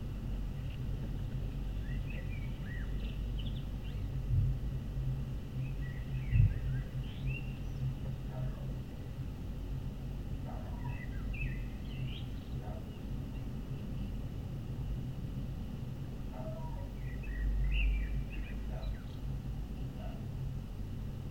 Kelmė, Lithuania, abandoned house
abandoned building where in 1944-1953 were tortured lithuanian resistents. contact microphones